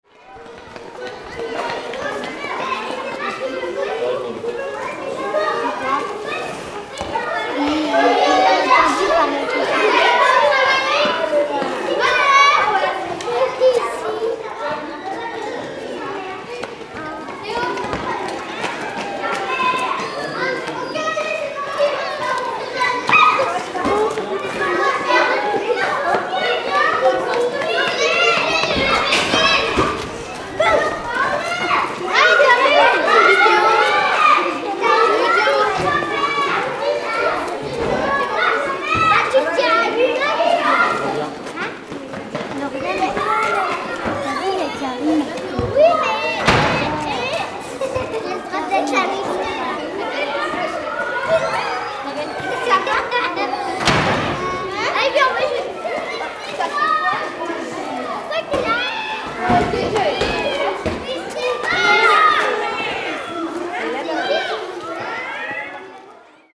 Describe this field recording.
Cour de récréation de l'école d'Irai, Zoom H6, micros Neumann